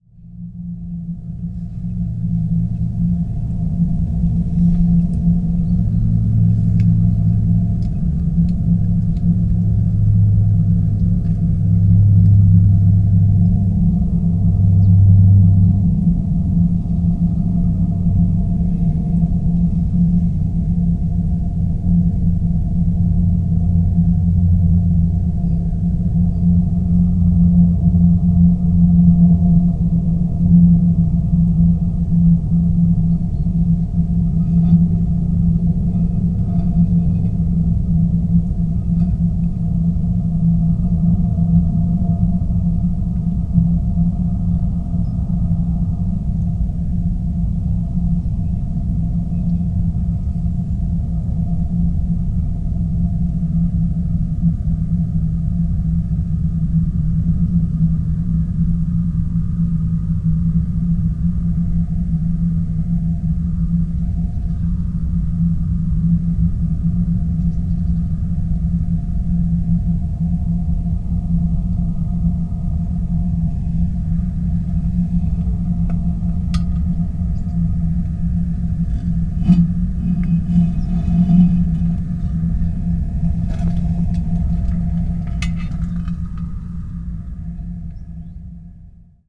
Montluel, old cemetery
microphones in a watering can.
PCM-M10, SP-TFB-2, random position.